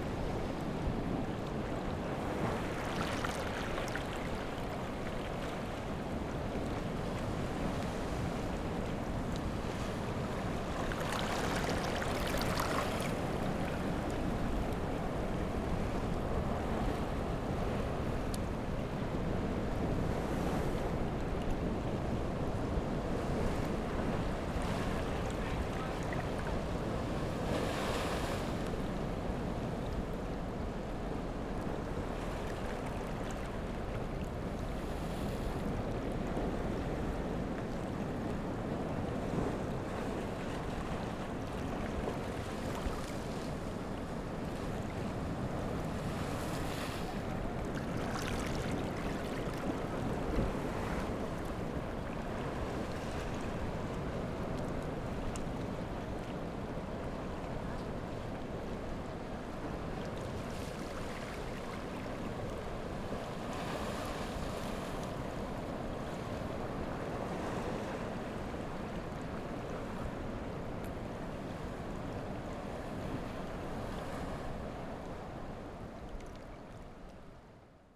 On the coast, Sound of the waves
Zoom H6 MS mic+ Rode NT4